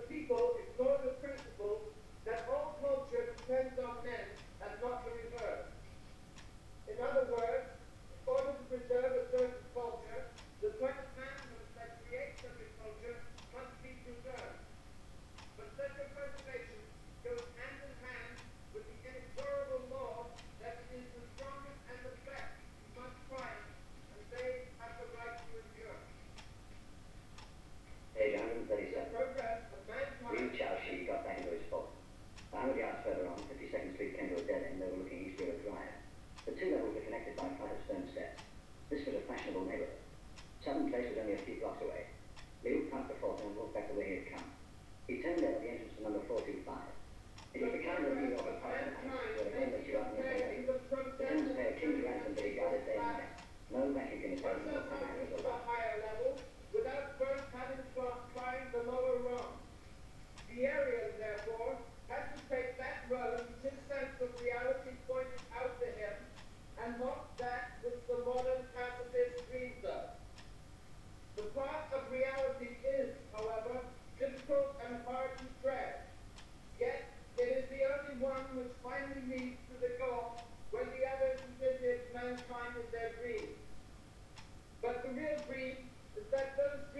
godard´s one plus one vs. das büro
the city, the country & me: september 2, 2010
Berlin, Germany, 2 September